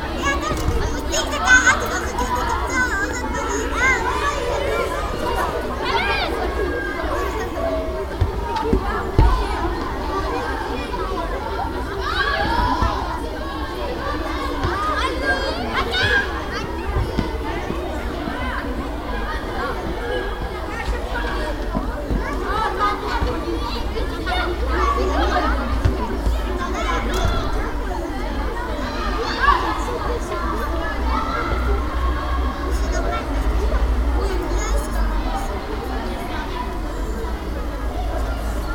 Court-St.-Étienne, Belgique - Neufbois school
Sounds of the Neufbois school, where children are playing.